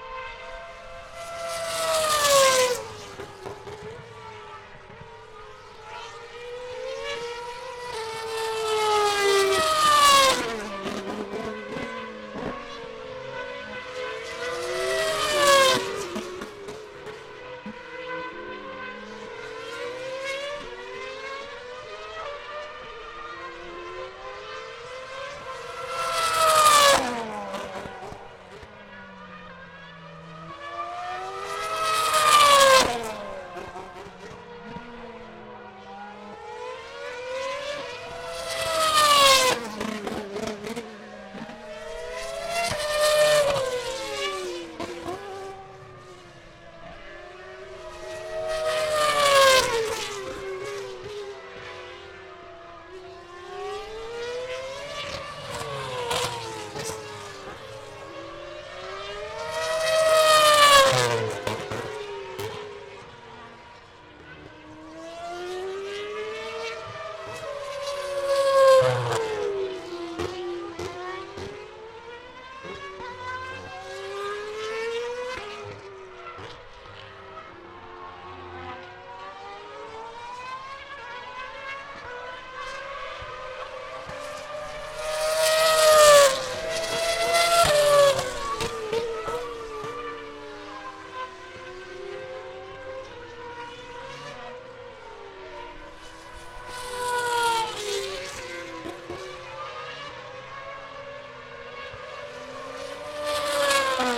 Silverstone, UK - F1 Cars at corner
F1 Cars at screeching past a corner at Silverstone.
Recorded using a Zoom H4N